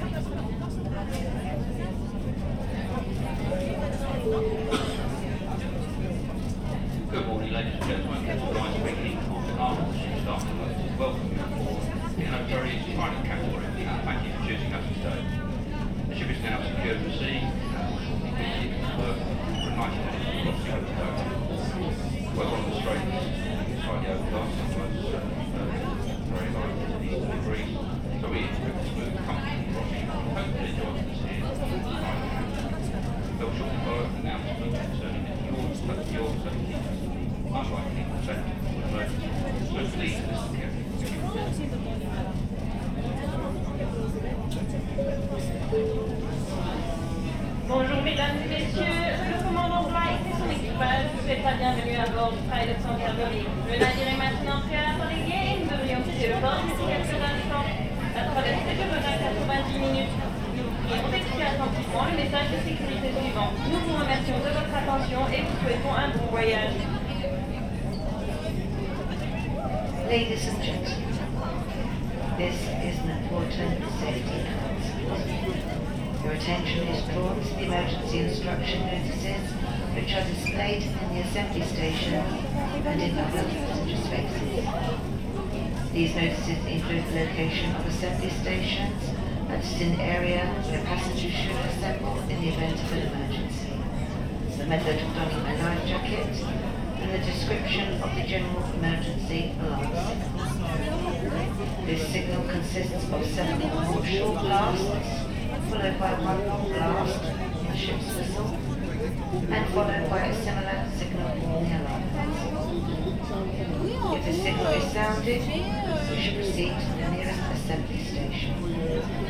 Calais, Frankreich - P&O Pride of Canterbury
Aboard the P&O ferry Pride of Canterbury, leaving Calais en route to Dover. Captain speaking and safety annoucements.
Zoom H3-VR, ambisonic recording converted to binaural, use headphones
Hauts-de-France, France métropolitaine, France